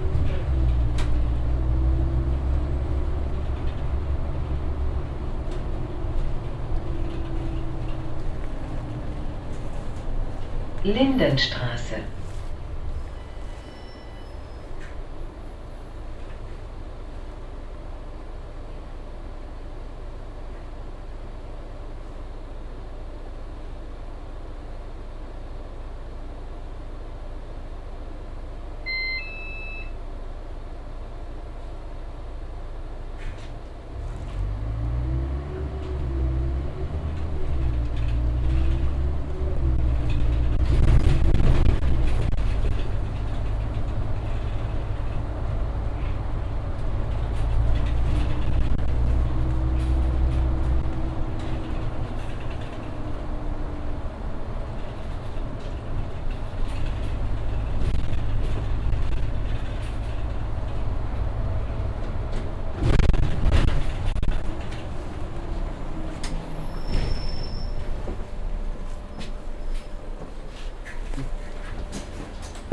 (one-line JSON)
{"title": "berlin, in the bus, station lindenstraße", "date": "2009-05-11 09:46:00", "description": "inside a city bus - automatic female voice station announcement of the next station stop\nsoundmap d: social ambiences/ listen to the people - in & outdoor nearfield recordings", "latitude": "52.51", "longitude": "13.40", "altitude": "36", "timezone": "GMT+1"}